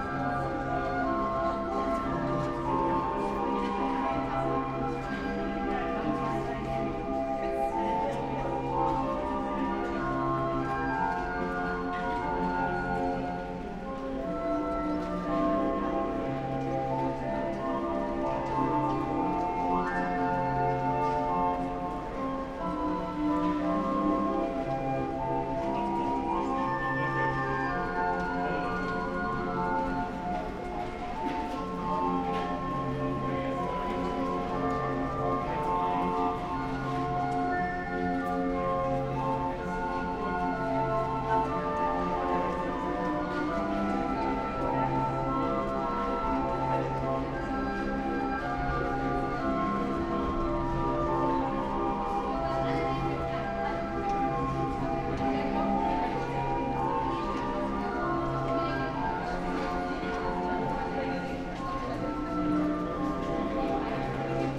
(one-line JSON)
{"title": "Masurenallee, Messe, Berlin, Deutschland - underpass, street organ, pedestrians", "date": "2019-01-27 10:00:00", "description": "Berlin fair, underpass, a street organ is continuously playing, loads of pedestrians passing by, on their way to the international food fair\n(Sony PCM D50, Primo EM172)", "latitude": "52.51", "longitude": "13.28", "altitude": "52", "timezone": "GMT+1"}